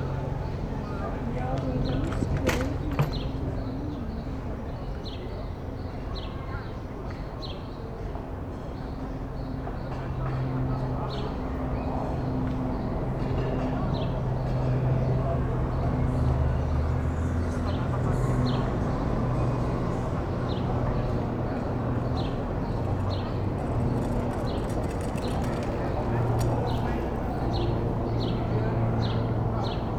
Berlin: Vermessungspunkt Friedel- / Pflügerstraße - Klangvermessung Kreuzkölln ::: 26.05.2011 ::: 19:11
Berlin, Germany, May 2011